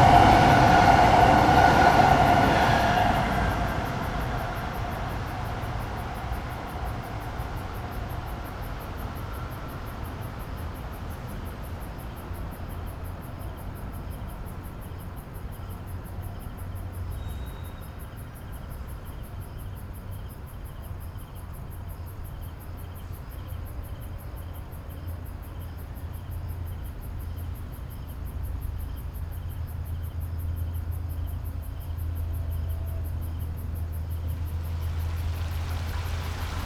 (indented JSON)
{
  "title": "竹圍, Tamsui Dist., New Taipei City - Next to the track",
  "date": "2012-04-19 20:01:00",
  "description": "Next track, Traveling by train, Water sound\nBinaural recordings\nSony PCM D50 + Soundman OKM II",
  "latitude": "25.14",
  "longitude": "121.46",
  "altitude": "5",
  "timezone": "Asia/Taipei"
}